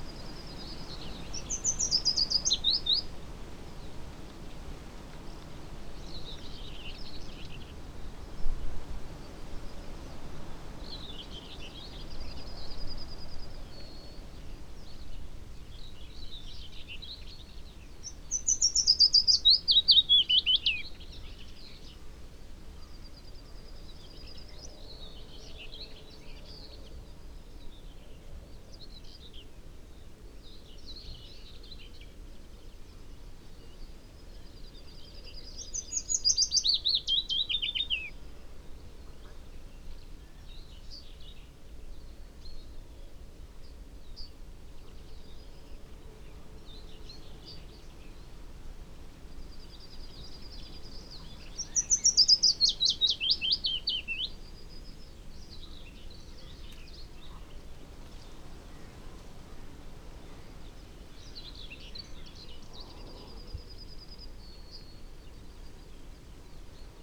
Green Ln, Malton, UK - willow warbler song soundscape ... wld 2019 ...
Willow warbler song soundscape ... SASS on tripod ... bird song ... calls ... from ... whitethroat ... crow ... yellowhammer ... wood pigeon ... chaffinch ... robin ... background noise ...